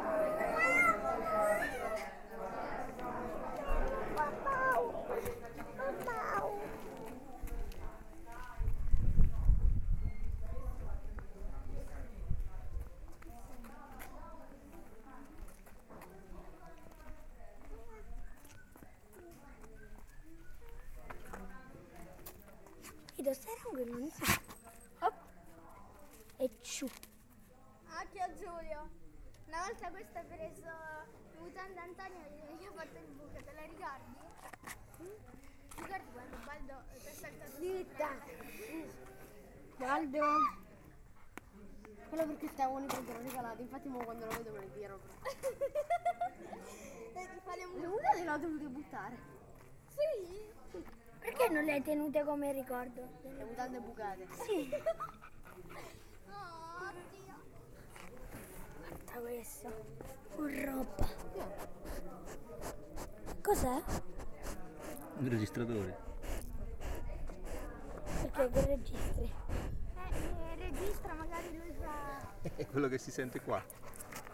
Cantina Aurora - Convivio 3 #foodgallery
#foodgallery
Apri bene la bocca - Convivio 3